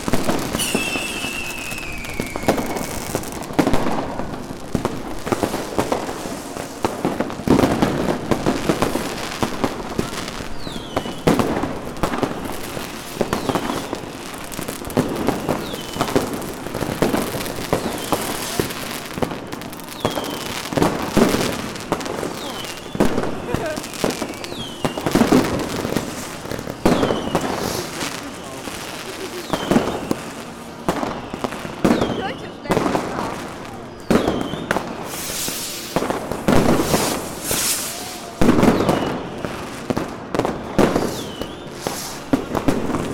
cologne, neusserstrasse, agneskirche, platz - Fireworks on New Year's Eve
Fireworks in the night of New Year's Eve, church bells of St. Agnes, people sharing new years wishes